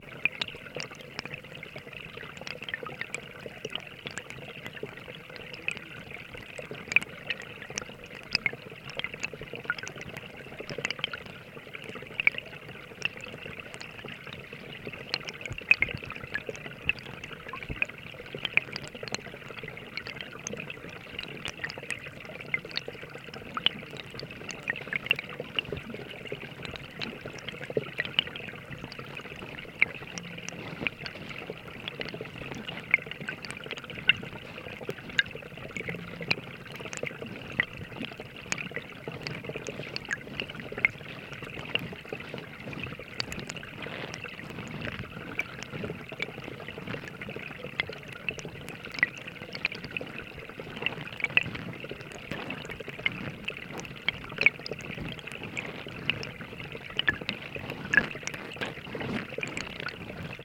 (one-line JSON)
{"title": "Eastman Hall, Ithaca, NY, USA - Stream droplets", "date": "2021-02-17 13:00:00", "description": "Flowing and dripping water caused by snow melt near Eastman Hall, footsteps in the snow and muffled voices can be heard\nRecorded with a hydrophone", "latitude": "42.42", "longitude": "-76.49", "altitude": "278", "timezone": "America/New_York"}